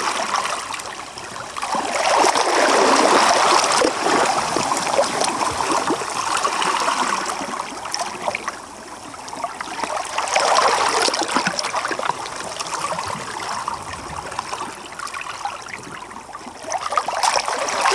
Gairloch, UK - Tide Pool below the Gairloch Free Church
Captured with a Sound Devices MixPre-3 and a stereo pair of DPA4060s.